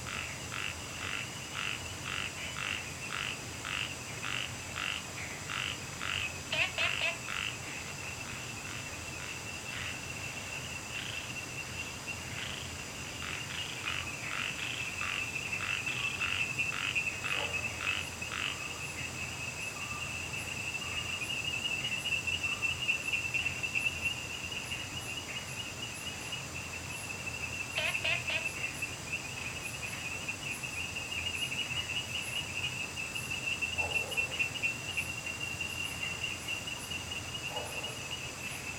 茅埔坑溼地, 南投縣埔里鎮桃米里 - Frogs chirping
Frogs chirping, Insects sounds, Dogs barking, Wetland
Zoom H2n MS+ XY
Nantou County, Puli Township, 桃米巷11-3號, 10 August 2015